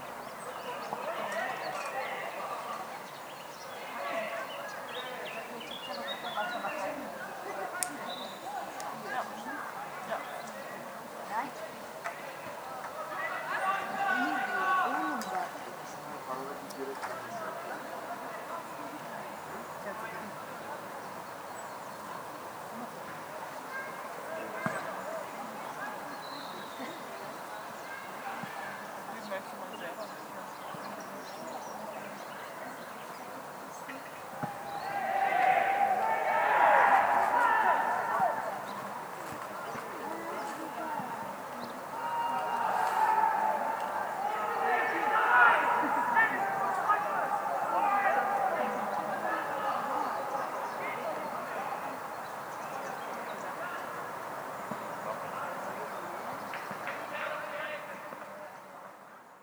tondatei.de: schrebergarten köln-niehl - tondate.de schrebergarten köln-niehl
kleingartenanlage, fußballplatz, leute, vögel